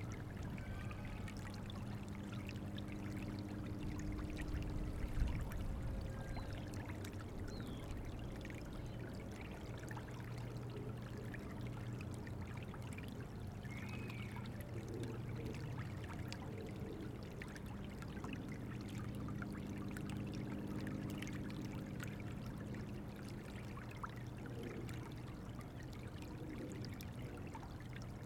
Heman Park, University City, Missouri, USA - Heman North Bank
Heman Park north bank of River Des Peres